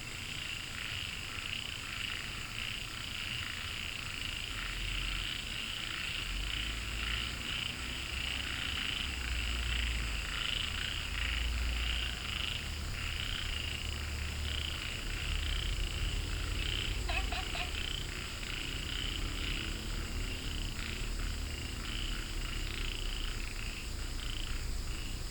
Frogs chirping, In Wetland Park